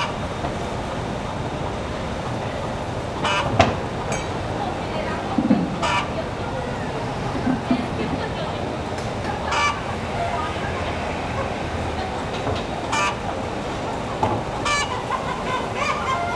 Qingyun Rd., Tucheng Dist., New Taipei City - Next market
Next market, Birds singing, Chicken sounds, Traffic Sound
Zoom H4n +Rode NT4
New Taipei City, Taiwan, February 2012